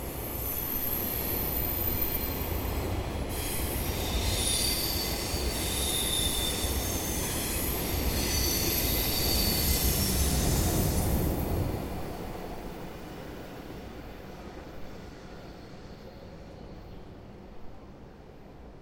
In mittlerer Höhe, auf dem denkmalgeschützten, heute aber stillgelegten und zum Spazierweg umgenutzten Bahnviadukt, kündigt sich der auf nächsthöhere Ebene vorbeifahrende Zug bereits von Ferne an: zuerst ein Grollen, dann ein Sirren, schliesslich sein ganzes Gewicht, das alles andere verdrängt. Obwohl der Ort beinahe menschenleer ist, projiziert sich allerlei Menschliches über die gegenüberliegende, nun funktionslos gewordene Brandschutzwand eines Wohnblocks zu dem erhabenen Ort.
Art and the City: Hans Josephsohn (Grosse Liegende, 1995-2001)
Zürich District, Switzerland